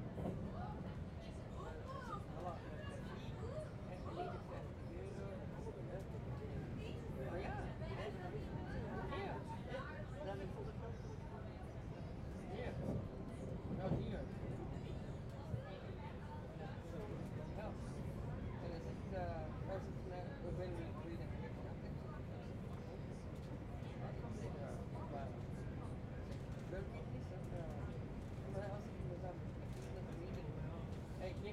Departure to Heist-aan-Zee Lane 12 Gent Sint-Pieters - mens trein
ZOOM H2 recorded with 4 mics to 2 channels